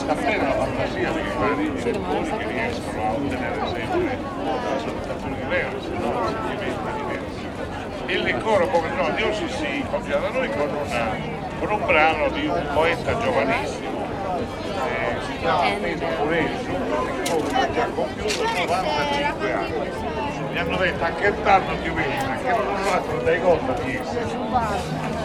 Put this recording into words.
This is a recording of one of the music performances at the Fiera del Folklore.